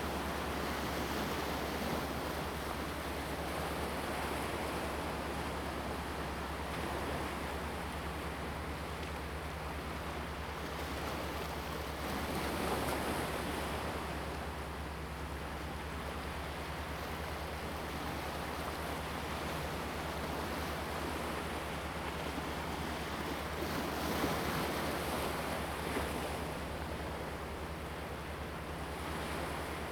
At the beach, sound of the Waves
Zoom H2n MS+XY
Huxi Township, 202縣道, 21 October 2014